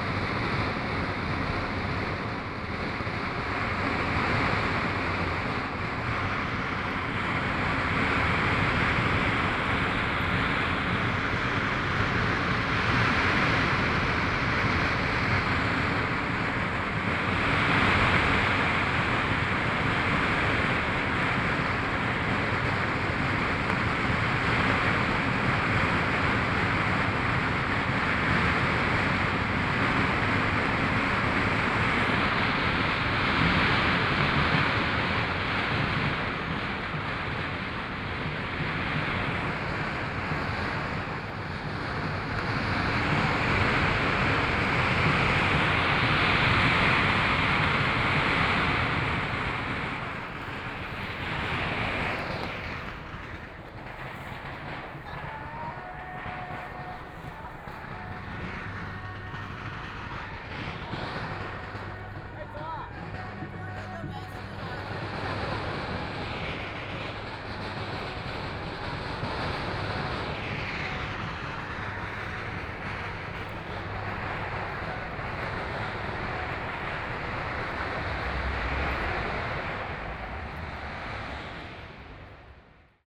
Bihu Park, Taipei City - The sound of firecrackers
Distance came The sound of firecrackers
Please turn up the volume a little. Binaural recordings, Sony PCM D100+ Soundman OKM II
Taipei City, Taiwan